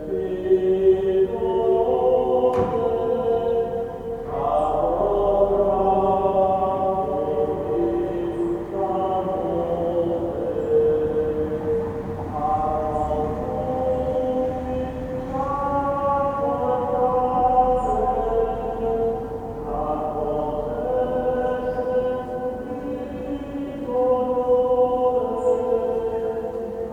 San Michele Church, Pavia, Italy - 05 - October, Wednesday 430PM, 14C, Vespri
Vesper choirs recorded from outside, close to the small single-lancet window of the Church, while few people passing by
24 October 2012